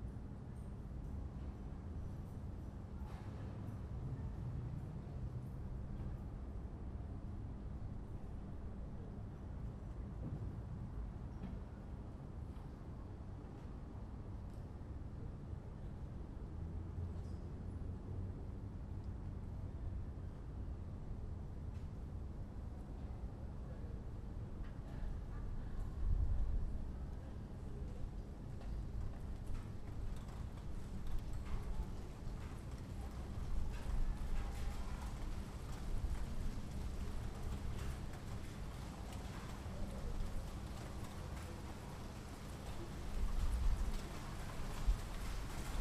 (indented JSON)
{
  "title": "Cologne - starting rain and thunder",
  "date": "2009-08-25 09:20:00",
  "description": "After several days of sunny weather, today's sky gives a preliminary taste of autumn: it is dark, grey and rainy. The recording captures the moment, when a heavy rain starts and thunder rolls.",
  "latitude": "50.93",
  "longitude": "6.93",
  "altitude": "57",
  "timezone": "Europe/Berlin"
}